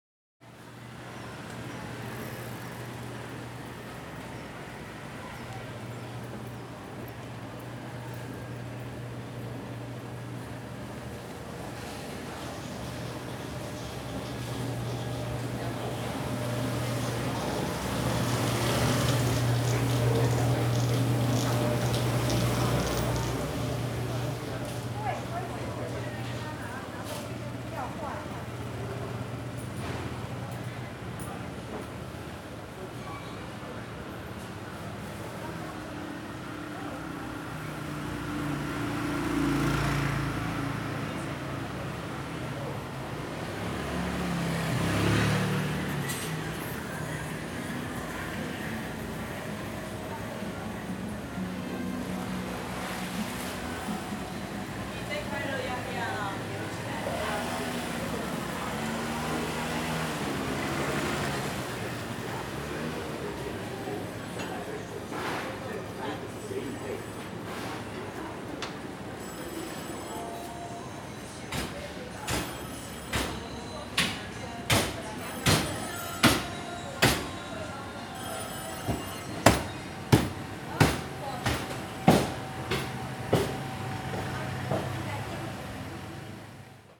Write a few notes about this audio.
Walking in a small alley, Traditional Market, Traffic Sound, Freezer sound, Zoom H4n